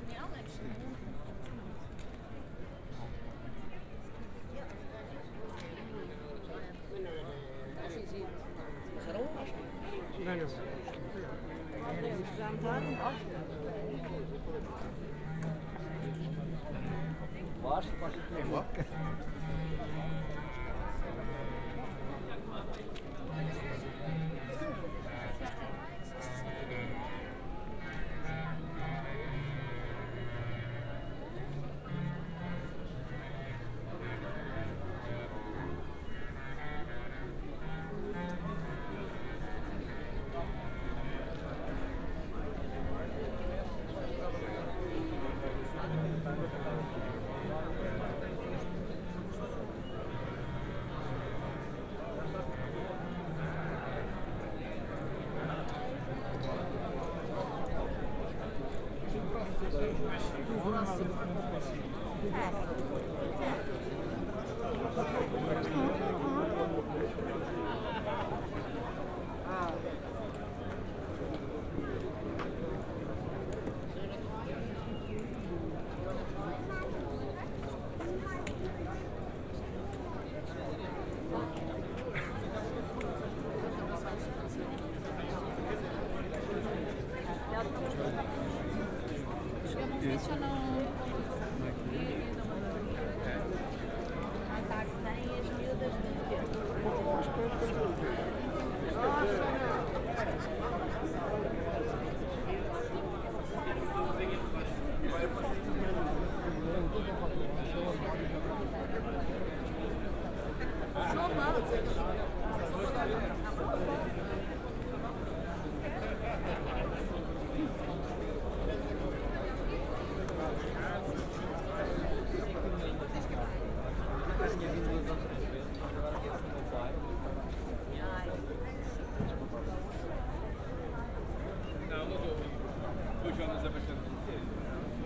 {"title": "Funchal, Estrada Monumental - oldtimer show", "date": "2015-05-09 12:00:00", "description": "(binaural) walking along crowds at the old car exhibition. plenty of people interested in the show as a few hundred cars were on display. rather big event with TV coverage. old-timer cars, bikes, motors of all kinds and ages being gathered along a long street over a distance of few kilometers.", "latitude": "32.64", "longitude": "-16.93", "altitude": "68", "timezone": "Atlantic/Madeira"}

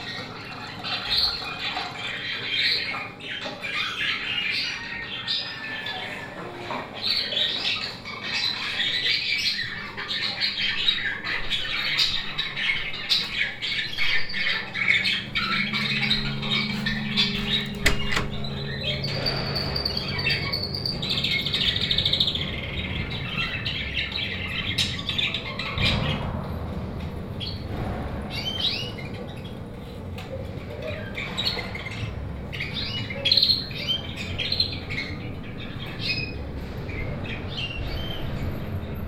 {"title": "WLD atelier in NDSM yard hall", "date": "2010-07-18 17:15:00", "description": "short visiting my atelier in the monumental NDSM yard building; because it is a hot sunday there are not much artists, the scaters in the indoor scating hall has taken over the sounds in the mainhall; entering my atelier and hearing the playback of a part of a soundscape \"the animal shop\" mixed with ateliersounds", "latitude": "52.40", "longitude": "4.90", "altitude": "-1", "timezone": "Europe/Amsterdam"}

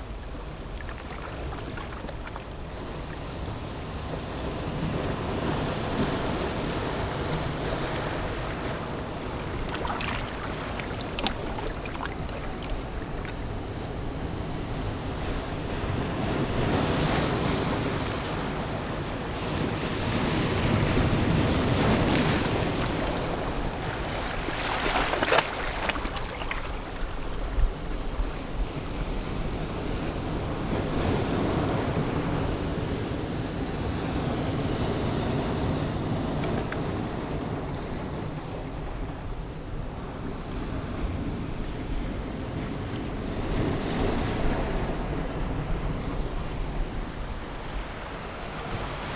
{"title": "金沙灣海濱公園, Gongliao District - Sound of the waves", "date": "2014-07-21 12:43:00", "description": "Coastal, Sound of the waves\nZoom H6 XY mic+ Rode NT4", "latitude": "25.08", "longitude": "121.92", "altitude": "1", "timezone": "Asia/Taipei"}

